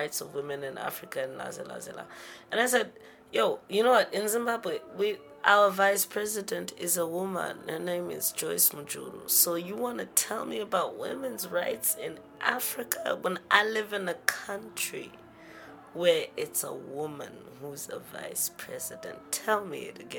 … Chiwoniso continues talking about her love and trust in the young women entering into the performing arts in Zim ; and her adoration for the women in the countryside whom she loves joining in music. The recording ends with Chi giving a beautiful description of a communal jamming and dancing with women in the countryside; and a line from a song…
Chiwoniso Maraire was an accomplished Zimbabwe singer, songwriter and mbira artist from a family of musicians and music-scholars; she died 24 July 2013.
Harare, Zimbabwe, 25 August 2012